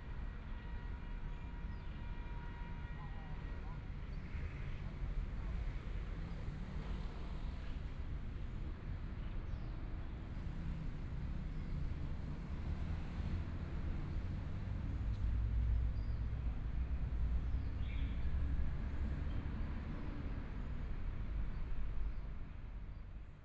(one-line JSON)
{"title": "康樂里, Zhongshan District - Sitting in the park", "date": "2014-02-06 14:02:00", "description": "Sitting in the park, Environmental sounds, Construction noise, Traffic Sound, Binaural recordings, Zoom H4n+ Soundman OKM II", "latitude": "25.05", "longitude": "121.52", "timezone": "Asia/Taipei"}